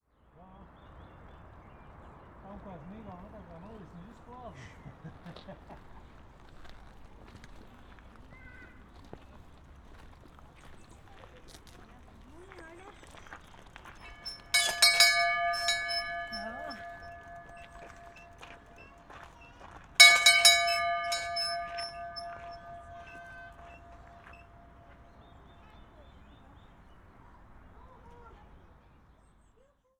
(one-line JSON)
{"title": "Maribor, Piramida - bell", "date": "2012-05-27 12:25:00", "description": "at Piramida, the little chapel on top of the hill, people frequently ring the bell when they arrive.", "latitude": "46.57", "longitude": "15.65", "altitude": "373", "timezone": "Europe/Ljubljana"}